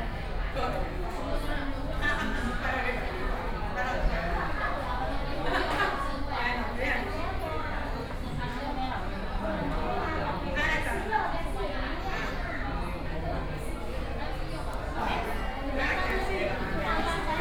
麥當勞-高雄新大勇店, Yancheng Dist., Kaohsiung City - In the fast food restaurant
In the fast food restaurant(McDonald's )